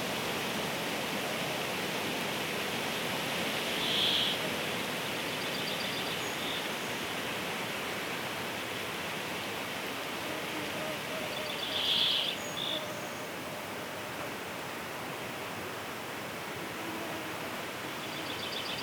forest-birds-insects-noise of trees, White Sea, Russia - forest-birds-insects-noise of trees
forest-birds-insects-noise of trees.
В лесу, шум деревьев, пение птиц, комары.